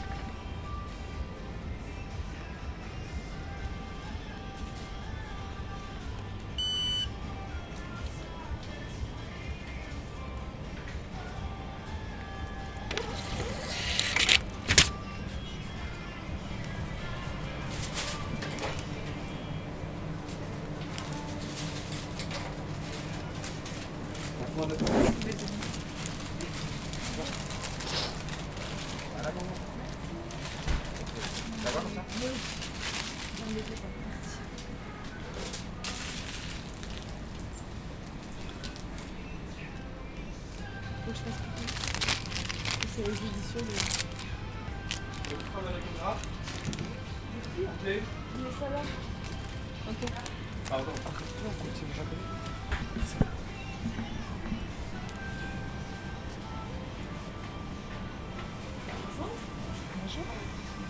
McDonalds Colomiers Pyrénées 2 allées des Pyrénées 31770 Colomiers, France - Commande chez Mcdo
4 amies qui font leur pause à Mcdo. Le bruit des friteuses, des machines. Un livreur arrive. Le bip du sans contact, du ticket qui édite. Le zip du sac à main. Le froissement des tickets. Les employés mac do, les clients. Une folle envie d'aller aux toilettes.